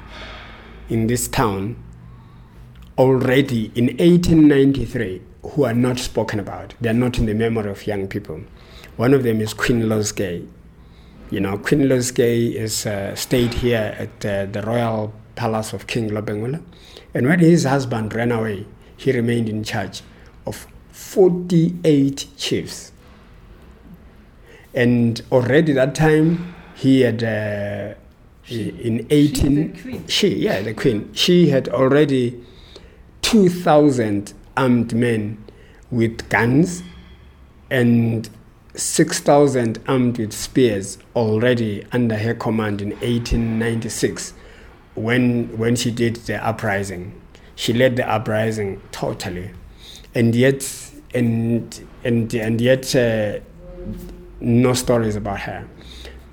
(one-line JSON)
{"title": "Amakhosi Cultural Centre, Makokoba, Bulawayo, Zimbabwe - Unknown heroes...", "date": "2012-10-29 14:23:00", "description": "… going back in history, again hardly anyone knows the fascinating stories, Cont continues… such as these of women heroes …", "latitude": "-20.14", "longitude": "28.58", "altitude": "1328", "timezone": "Africa/Harare"}